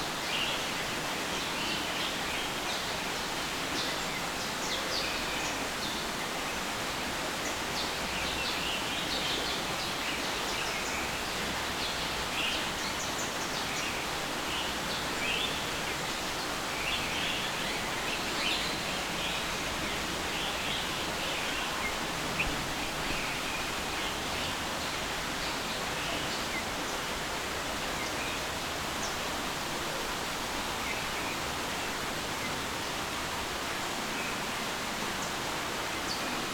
Tropical House Cologne Zoo, Cologne, Germany - Tropical House Cologne Zoo
Record from inside the tropical house of Cologne Zoo /w Zoom H6 Black
Nordrhein-Westfalen, Deutschland